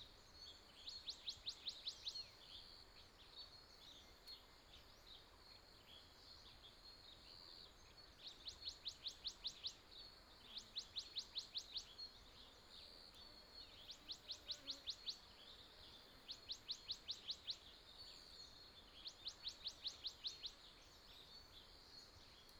Early morning mountain, Bird cry, Insect noise, Stream sound